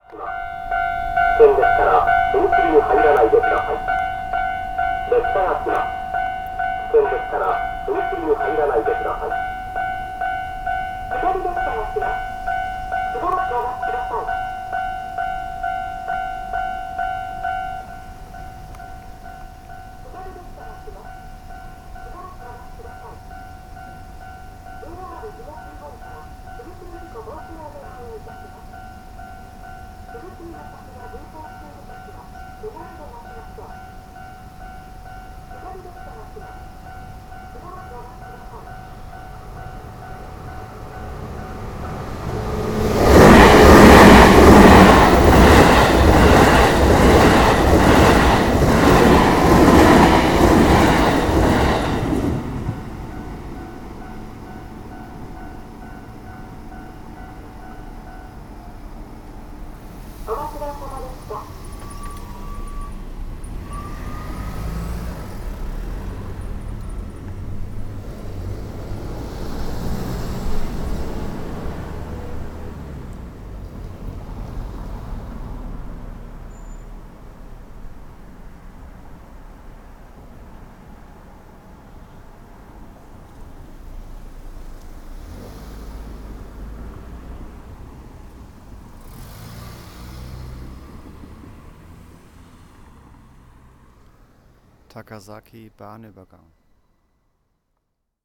takasaki, at a railway crossing
at a railway crossing close to the main station, signals that announce the train arrival, closing of the gate, train passing, cars driving again
international city scapes - social ambiences and topographic field recordings
3 August 2010, ~13:00